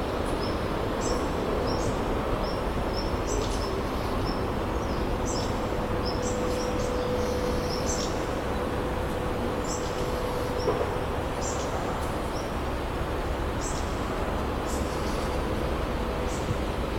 Unnamed Road, Костянтинівка, Донецька область, Украина - Промзона Константиновки
Звуки промышленного производства, ветер в деревьях и звуки птиц
Записано на Zoom H2n